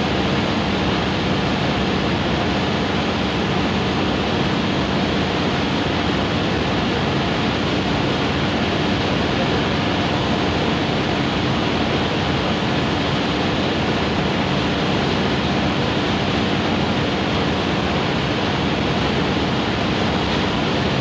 Zuid, Rotterdam, Netherlands - Waterbus
Recorded using Soundman binaural mics while traveling on the waterbus
Zuid-Holland, Nederland, 10 August 2021